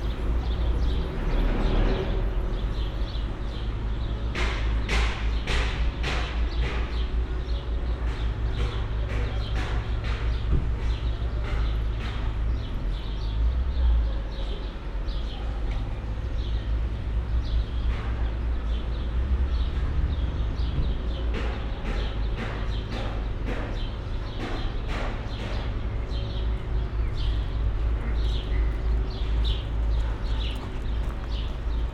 berlin: liberdastraße - the city, the country & me: construction site for a new supermarket
construction works
the city, the country & me: may 29, 2012